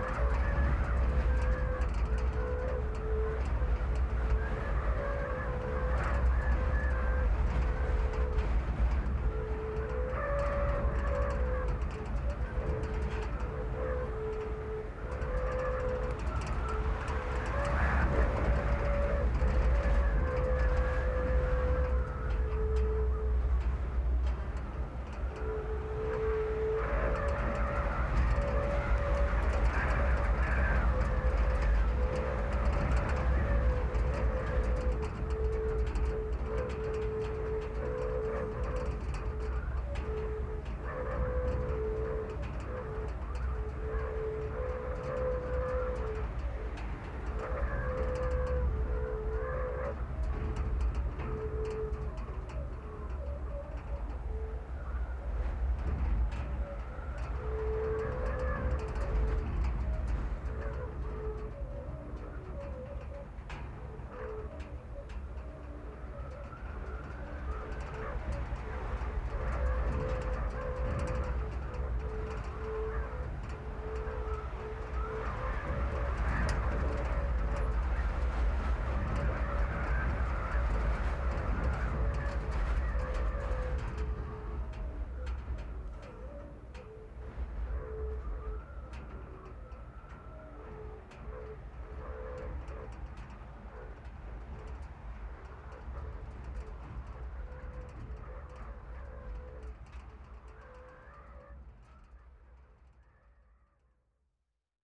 Unnamed Road, Nozakigō, Ojika, Kitamatsuura, Nagasaki, Japan - Whistling Fence at the Onset of a Typhoon
Nozaki Jima is uninhabited so the fences once used to keep wild boar away from the crops are not maintained and many lie rusted and twisted from the wind and rain. This was recorded at the onset of a typhoon so the wind was especially strong.
北松浦郡, 長崎県, 日本, 23 October 2019, 12:30